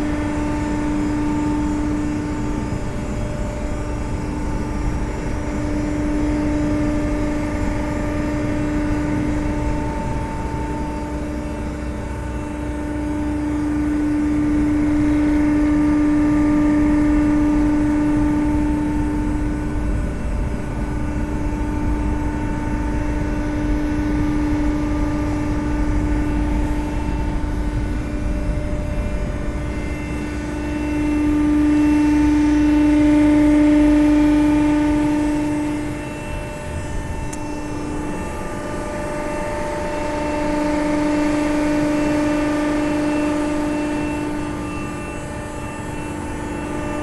La Grande-Paroisse, France - Varennes-Sur-Seine sluice
A boat entering in the Varennes-Sur-Seine sluice. In first, the doors opening, after the boat, and at the end the doors closing. The boat is called Odysseus. Shipmasters are Françoise and Martial.